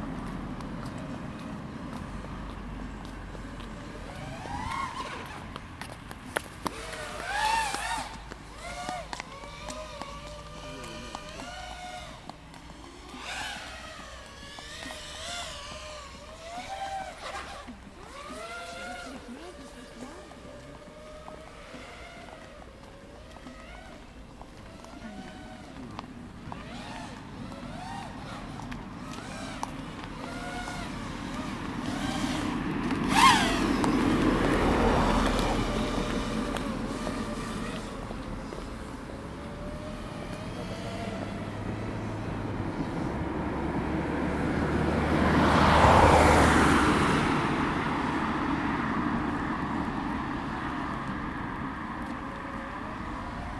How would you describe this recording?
Recordist: Raimonda Diskaitė, Description: Recorded on a sunny day on Kuverto street. Drone flying around, traffic noises and people walking. Recorded with ZOOM H2N Handy Recorder.